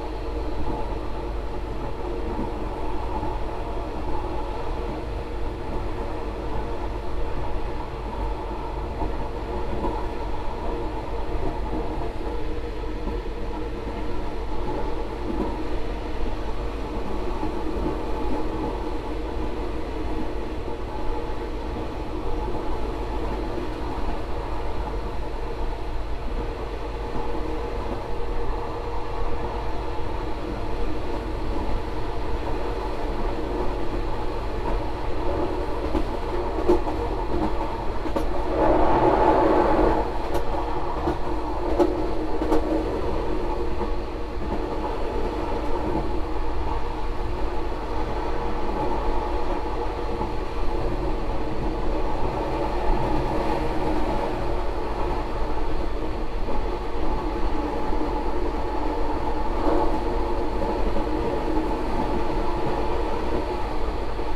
Tambon Thong Chai, Amphoe Bang Saphan, Chang Wat Prachuap Khiri Khan, Thailand - Toilette im Zug nach Surathani
Rattling and resonances of the toilette pipe in the train from Bangkok to Surathani, with a few occasional horn blowings.
5 August 2017